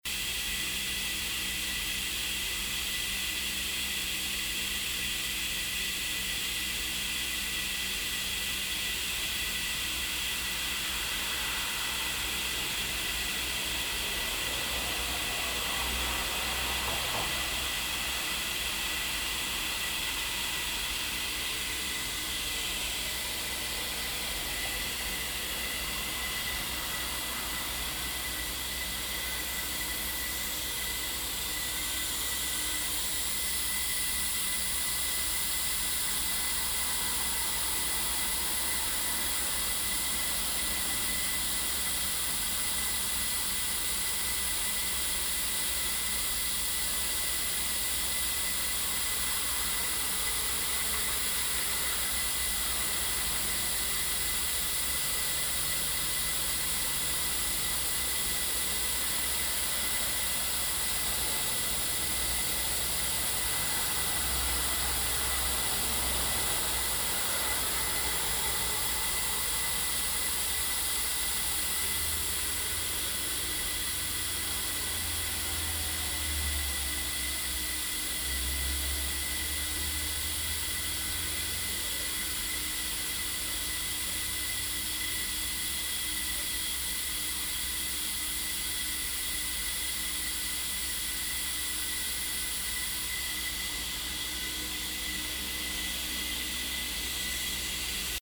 bensberg, an der bahn, staubsaugeranlage
staubsaugeranlage für pkw's, morgens
soundmap nrw: social ambiences/ listen to the people - in & outdoor nearfield recordings